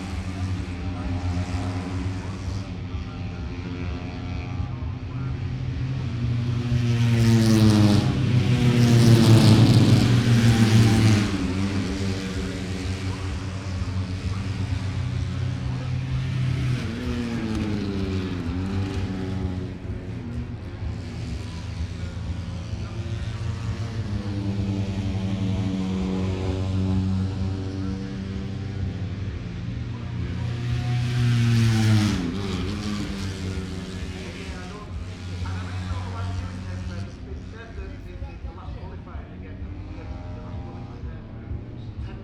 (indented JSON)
{
  "title": "Silverstone Circuit, Towcester, UK - british motorcycle grand prix 2019 ... moto three ... fp1 ...",
  "date": "2019-08-23 09:00:00",
  "description": "british motorcycle grand prix 2019 ... moto three ... free practice one ... inside maggotts ... some commentary ... lavalier mics clipped to bag ... background noise ... the disco in the entertainment zone ..?",
  "latitude": "52.07",
  "longitude": "-1.01",
  "altitude": "157",
  "timezone": "Europe/London"
}